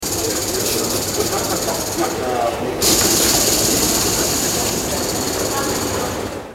Braunschweig Hauptbahnhof, alte mechanische Anzeigetafel, rec 2004